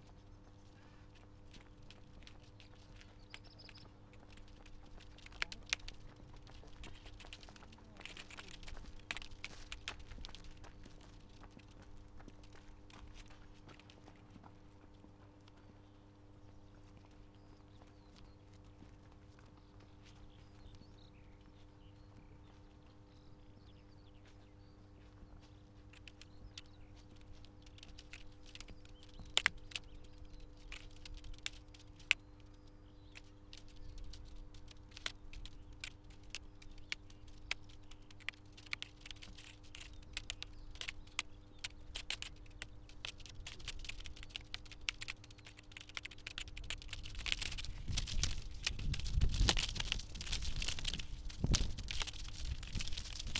Poznan outskirts, Morasko Campus area - plastic strap
a loose strp of plastic tape jigling in the air, buzz of power transformer in the backgroud, voices of the sunday strollers